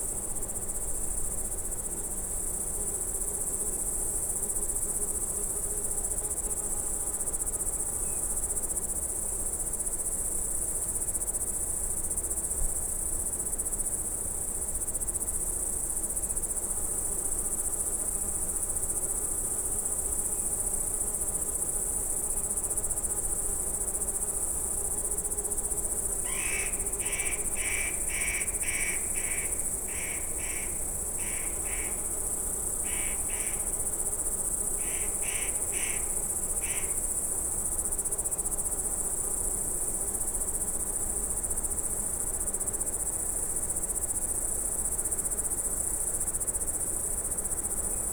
Rázcestie pod Bystrou, Unnamed Road, Pribylina, Slovakia - West Tatras, Slovakia: Evening on a Mountain Meadow
Sunny autumn evening on a mountain meadow in West Tatras. Sound of crickets, few bees from nearby small beehive, few birds, wind in coniferous forest, distant creek.